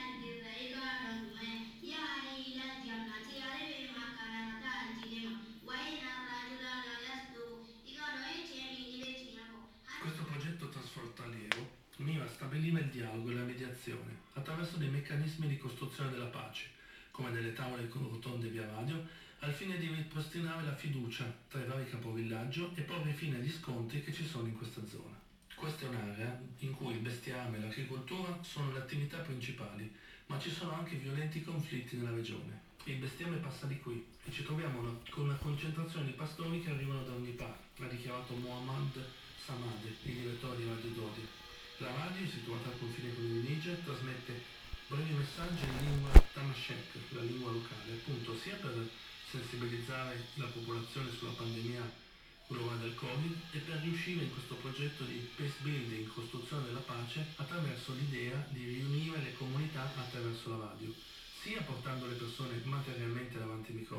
Ascolto il tuo cuore, città. I listen to your heart, city. Several chapters **SCROLL DOWN FOR ALL RECORDINGS** - “Outdoor market on Thursday in the square at the time of covid19” Soundwalk
“Outdoor market on Thursday in the square at the time of covid19” Soundwalk
Chapter CIV of Ascolto il tuo cuore, città. I listen to your heart, city.
Thursday, June 11th 2020. Walking in the outdoor market at Piazza Madama Cristina, district of San Salvario, Turin ninety-thre days after (but day thirty-nine of Phase II and day twenty-six of Phase IIB and day twaenty of Phase IIC) of emergency disposition due to the epidemic of COVID19.
Start at 11:24 a.m., end at h. 11:52 a.m. duration of recording 18’25”, full duration 28’15” *
As binaural recording is suggested headphones listening.
The entire path is associated with a synchronized GPS track recorded in the (kml, gpx, kmz) files downloadable here:
This soundwalk follows in similar steps to similar walk, on Thursday too, April 23rd Chapter LIV of this series of recordings. I did the same route with a de-synchronization between the published audio and the time of the geotrack because: